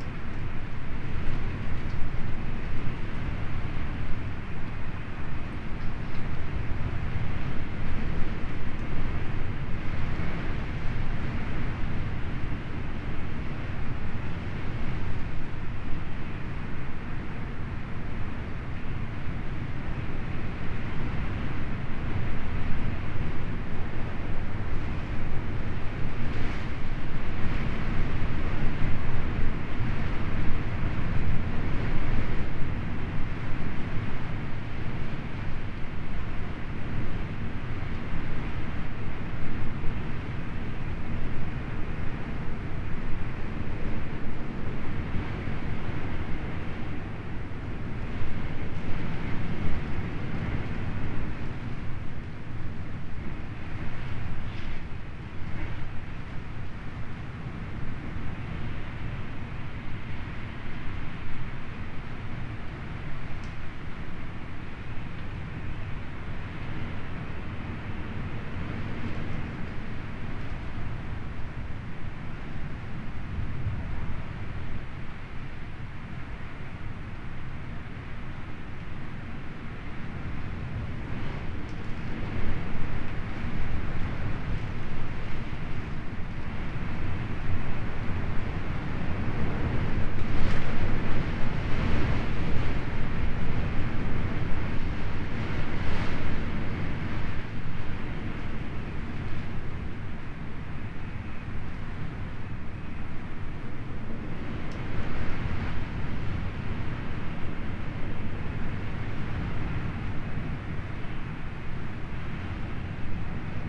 Fishermans Bothy, Isle of Mull, UK - Raging storm from inside bothy on Kilfinichen Bay
I awoke to the wonderful sound of a storm raging outside the bothy I was staying in, with the dying embers from the wood burning stove to keep warm it was a delight to listen to. Sony M10 boundary array.
11 November 2019, 05:24